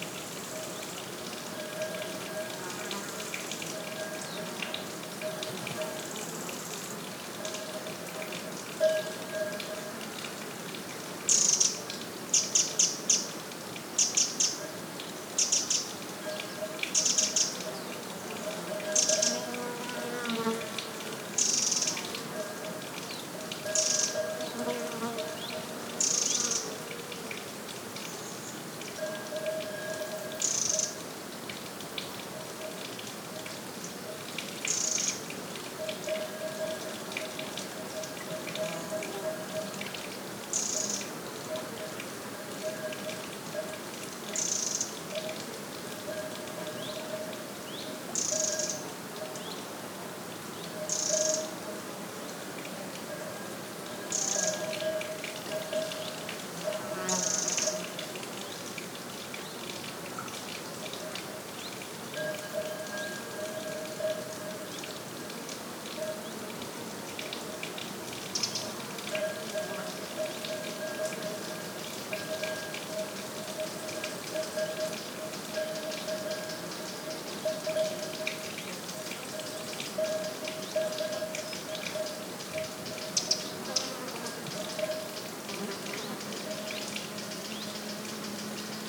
Paisaje sonoro matutino en la fuente. Variedad de cantos y llamadas de aves, muchos insectos y unas vacas a cierta distancia.